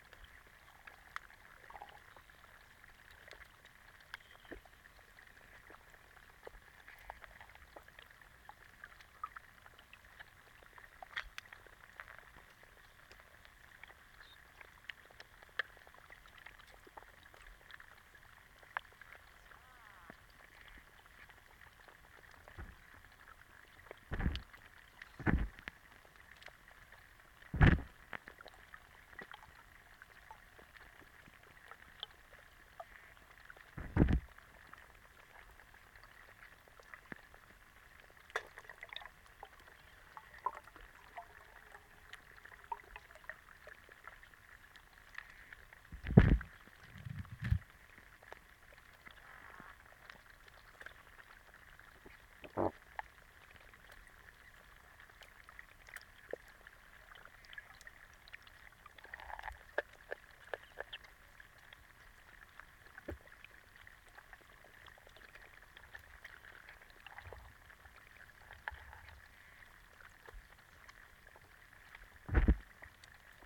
Perunque Creek, Quail Ridge Park, Wentzville, Missouri, USA - Perunque Creek Hydrophone
I dropped a hydrophone into a deep pool in front of a rootwad hoping to record some critters hanging out in the eddy. The hydrophone attracted a lot of fish including one who kept attacking the mic. The fish eventually expressed his displeasure to the intrusion which can be heard at around 54 seconds.
Missouri, United States, 19 June, 09:32